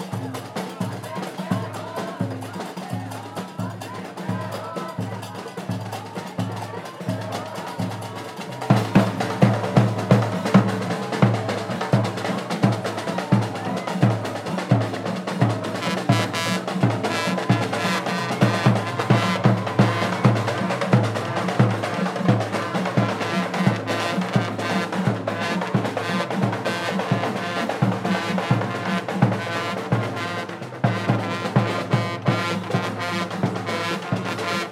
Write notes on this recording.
Traditional instruments (qraqeb, drums, trumpets), voices. Tech Note : Sony PCM-D100 internal microphones, wide position.